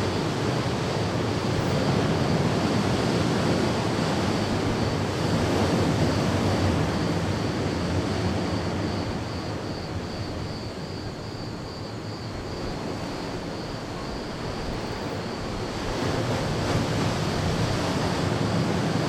Masunte (Mazunte), beach atmo
recorded from the balcony of our cabana in Mazunte. Close to punta cometa